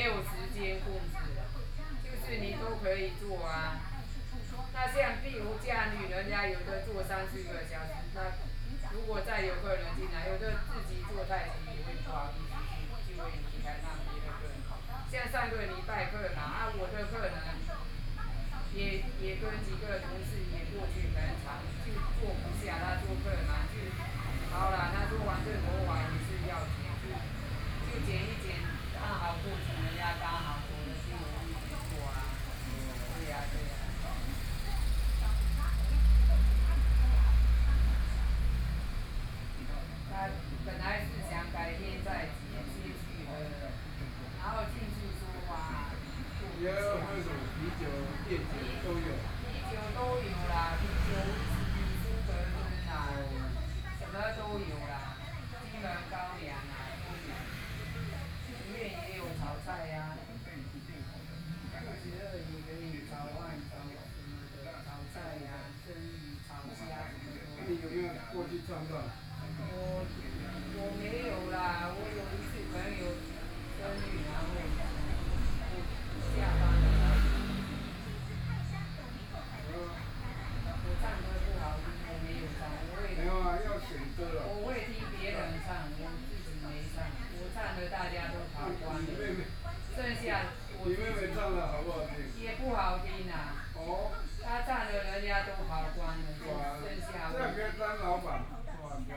Beitou - In the barber shop
In the barber shop, Female hairdresser dialogue between customers, Barber's family from time to time, Binaural recordings, Zoom H6+ Soundman OKM II
Taipei City, Taiwan